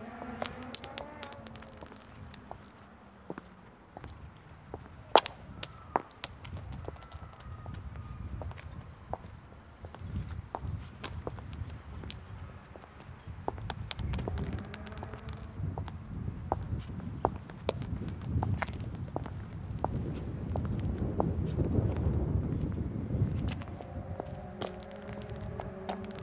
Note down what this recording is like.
a lazy walk on a windy spring afternoon around apartment construction yards . a pebble tangled between the feet so we kicked it a few times on the road and it eventually ended up in a water drain. a pile of bricks caught my attention. grainy sound of bricks being slid against each other. deep in the background Sunday ambience of the town - kids playing serenely, ambulance darting across, motorcycles roaring...